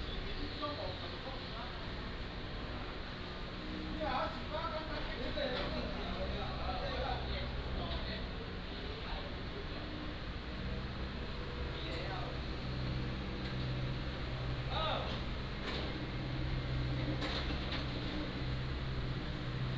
Yancheng District, Kaohsiung City - Small alley
Small alley, Sewer Construction